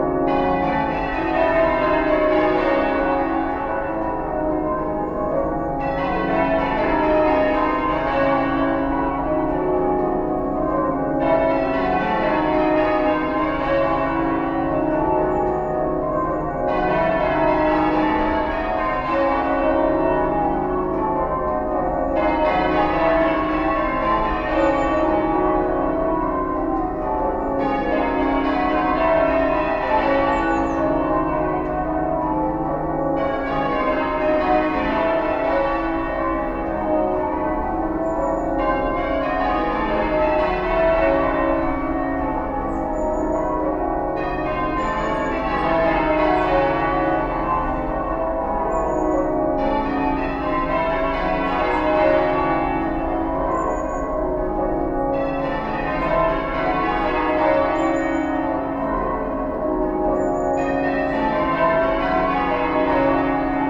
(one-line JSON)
{"title": "Remembrance Day, Worcester Cathedral, UK - Remembrance Day Bells", "date": "2019-11-10 11:00:00", "description": "Recorded from College Green at the back of the cathedral to reduce traffic noise and concentrate on the bells. A single gun salute, the Bourdon Bell strikes 11am. a second gun, muffled singing from inside the cathedral then the bells make their own partly muffled salute. They rang for much longer tha this recording. Recorded with a MixPre 3, 2 x Sennheiser MKH 8020s and a Rode NTG3 shotgun mic.", "latitude": "52.19", "longitude": "-2.22", "altitude": "26", "timezone": "Europe/London"}